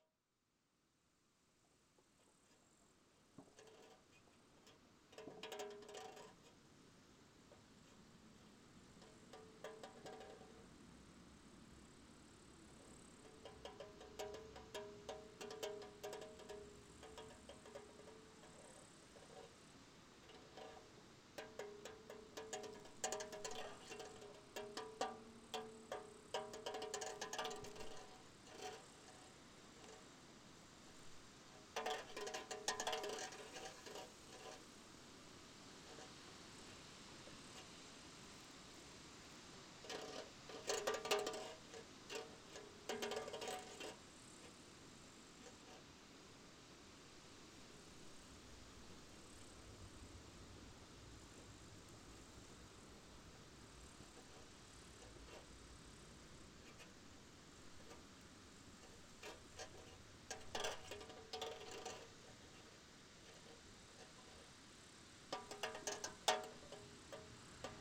Antalieptė, Lithuania, empty beer can
empty beer can svaying in a strong wind - probably as "device" to scare out wild animals from the garden
Utenos apskritis, Lietuva